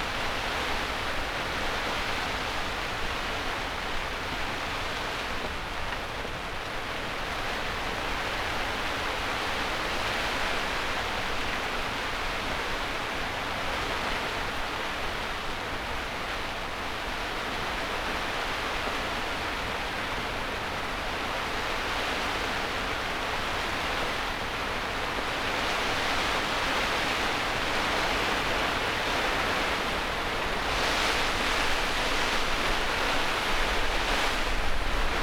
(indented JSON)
{
  "title": "Chapel Fields, Helperthorpe, Malton, UK - inside poly tunnel ... outside stormy weather ... binaural ...",
  "date": "2020-06-11 21:45:00",
  "description": "inside poly tunnel ... outside stormy weather ... binaural ... Luhd binaural mics in a binaural dummy head ...",
  "latitude": "54.12",
  "longitude": "-0.54",
  "altitude": "77",
  "timezone": "Europe/London"
}